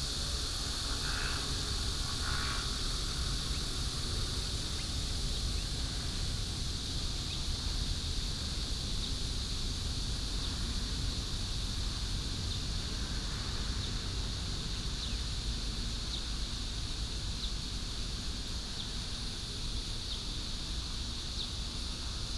Xinzhou 2nd St., Xinwu Dist. - Birds and Cicada Sound
Next to the baseball field, Cicada cry, birds sound, traffic sound
Xinwu District, Taoyuan City, Taiwan, 26 July 2017